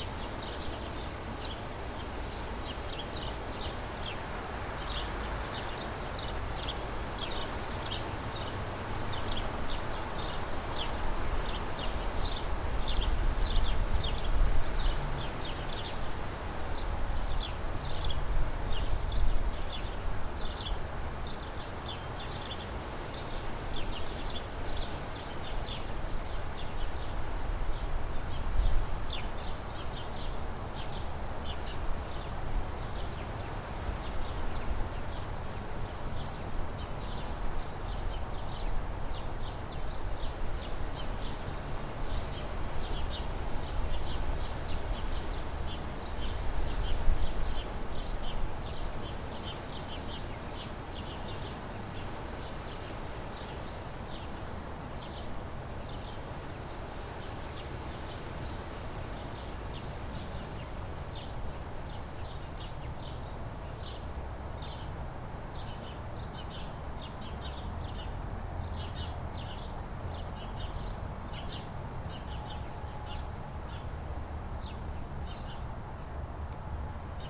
Emerald Dove Dr, Santa Clarita, CA, USA - Birds & Wind
From the backyard. A stereo mic and two mono mics mixed together.